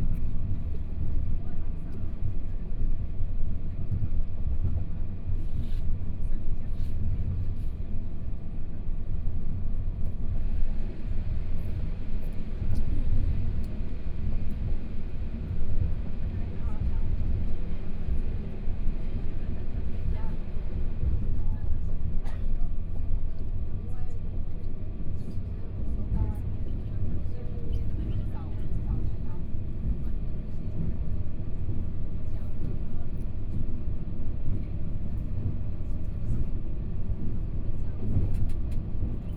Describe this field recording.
Interior of the train, from Chishang Station to Fuli Station, Binaural recordings, Zoom H4n+ Soundman OKM II